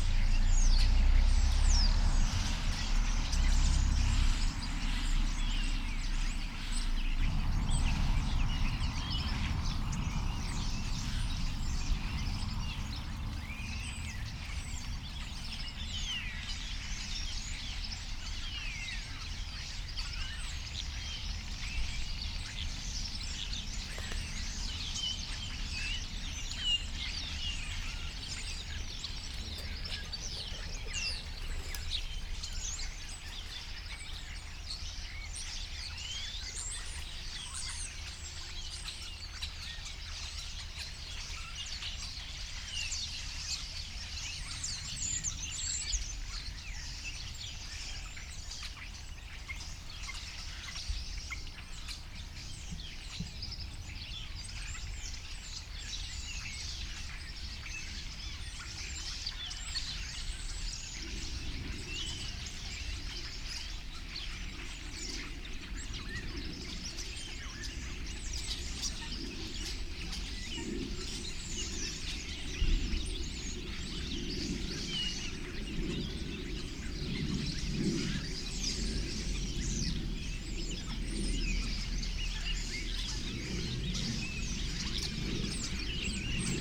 Kirchmöser Ost - starlings /w air traffic noise
many Starlings gathering in the trees around, unfortunately I've missed the moment a minute later, when they all flew away in one great rush. Constant rumble of aircraft on this Sunday afternoon in early autumn.
(Sony PCM D50, Primo EM172)
Brandenburg, Deutschland, 25 September 2022, ~13:00